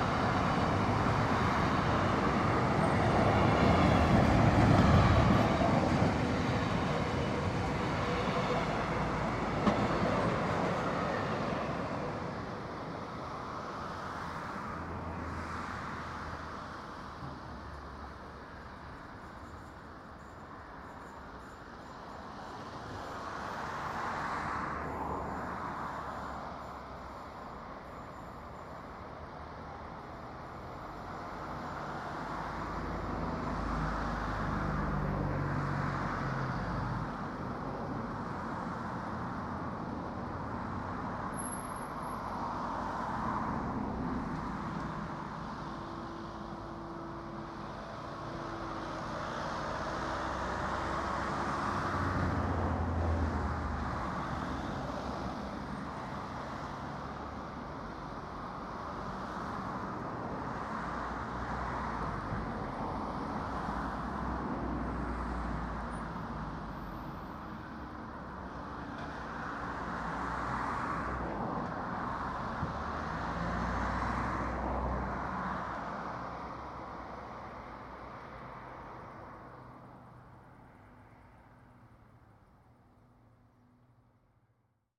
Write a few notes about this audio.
Long fret train passing slowly near the station, cars on the road. Tech Note : Sony PCM-M10 internal microphones.